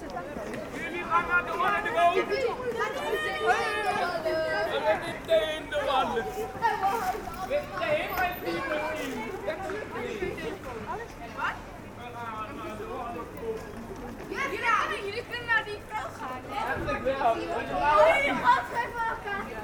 Children talking loudly into one of the main touristic avenue of Amsterdam. I follow them walking quickly.